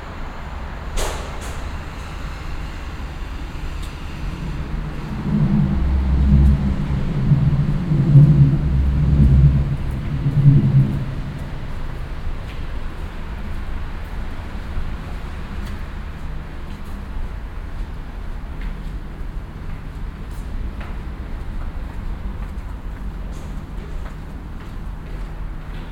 Passage von Altstadt zu Tonhalle und Hofgarten unter Aufffahrt zur Oberkasseler Brücke, nachmittags - Schritte, Aufzugsgeräusche, Strassenbahnüberfahrt, Verkehr
soundmap nrw: social ambiences/ listen to the people - in & outdoor nearfield recordings